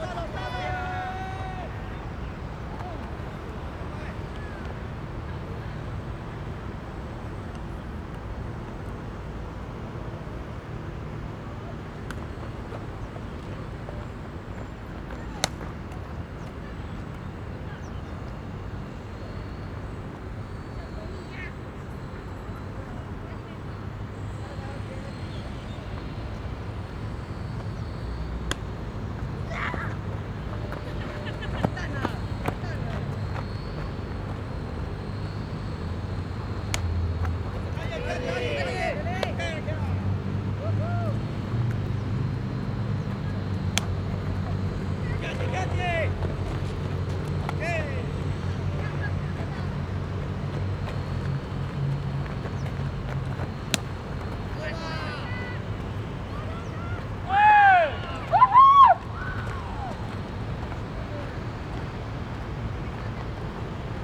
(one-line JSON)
{"title": "Sec., Huanhe W. Rd., Banqiao Dist., New Taipei City - Next to the baseball field", "date": "2012-01-19 14:22:00", "description": "Next to the baseball field\nRode NT4+Zoom H4n", "latitude": "25.02", "longitude": "121.49", "altitude": "15", "timezone": "Asia/Taipei"}